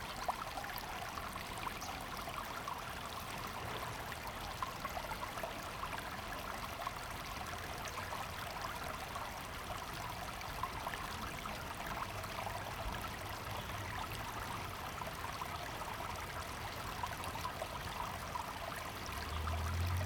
龍泉溪, Changhua City - Small streams
Small streams, Traffic sound
Zoom H2n MS+XY
Changhua City, Changhua County, Taiwan, 15 February